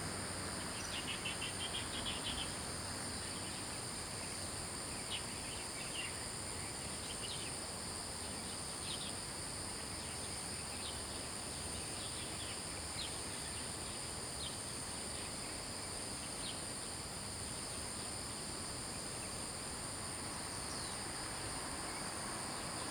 桃米巷, 桃米里, Puli Township - Birds singing
Birds singing, Traffic Sound
Zoom H2n MS+XY